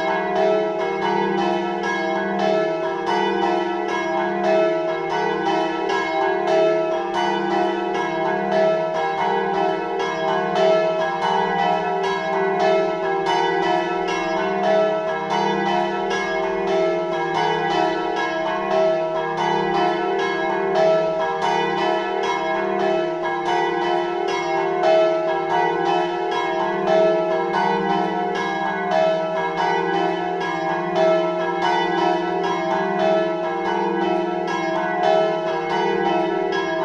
The clock and wake-up call on Sundays at 7am from local church.
Recorded with ZOOM H5 and LOM Uši Pro, AB Stereo Mic Technique, 40cm apart.
Slovenija, 9 February, 06:59